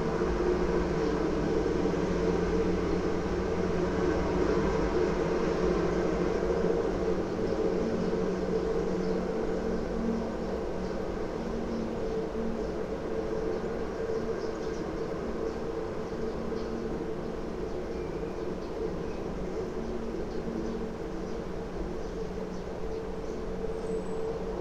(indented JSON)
{
  "title": "Njegoševa ulica, Maribor, Slovenia - telephone pole box",
  "date": "2012-06-16 13:12:00",
  "description": "resonance inside a cable box mounted on the side of a telephone pole, captured with contact microphones",
  "latitude": "46.57",
  "longitude": "15.63",
  "altitude": "277",
  "timezone": "Europe/Ljubljana"
}